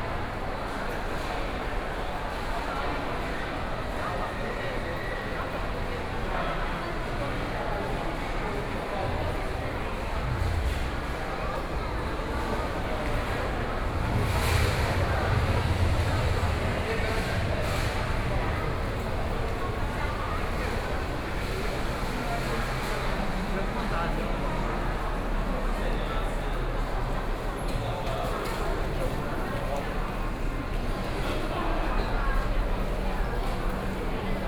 Xinyi District, Taipei - walking through .
From the square go into the department store, Via bus transit center into MRT stations, The crowd, Binaural recordings, Sony PCM D50 + Soundman OKM II